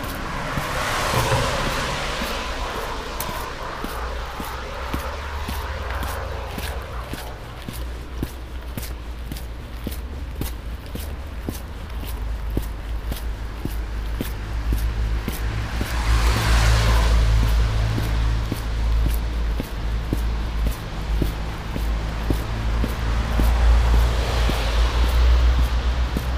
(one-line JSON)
{"title": "Olsztyn, Polska - Zatorze - sound walk", "date": "2013-02-01 22:17:00", "description": "Sound walk. Winter. Snow is already melted. Microphones hidden in clothing.", "latitude": "53.79", "longitude": "20.49", "altitude": "136", "timezone": "Europe/Warsaw"}